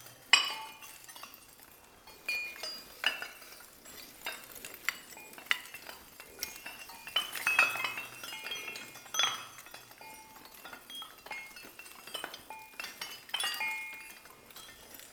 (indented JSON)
{
  "title": "Differdange, Luxembourg - Industrial sheep",
  "date": "2016-03-28 13:05:00",
  "description": "In an abandoned iron underground mine, chains and hooks are pending. I'm playing softly with it. This makes the noise of a flock of sheep. Metaphor is industrial sheep.",
  "latitude": "49.50",
  "longitude": "5.86",
  "altitude": "396",
  "timezone": "Europe/Luxembourg"
}